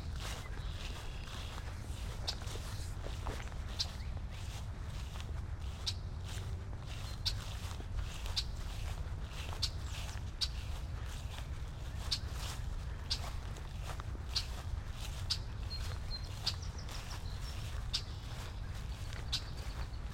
Starlings, wetland board walk, maple beech tree grove.